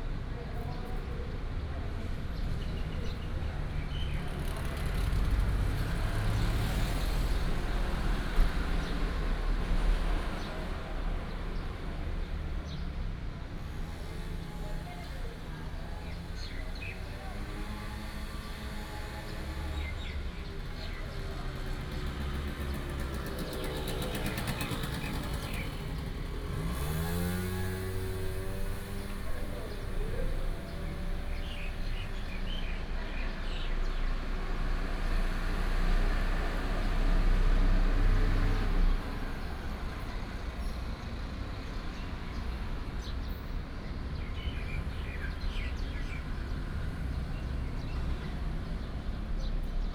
四湖參天宮, Sihu Township, Yunlin County - Square in front of the temple
Square in front of the temple, traffic Sound, Bird sound